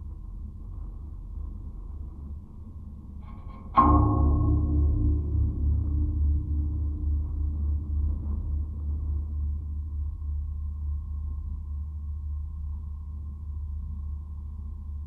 Playing with cables on a strange architecture. Recorded with a contact microphone, stereo, placed onto two metallic cables.
Mont-Saint-Guibert, Belgium, 10 July